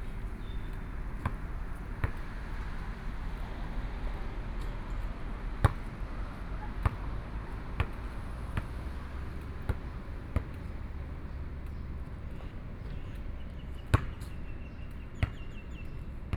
Birdsong, Playing basketball, The weather is very hot, Traffic Sound, Aboriginal tribes
Binaural recordings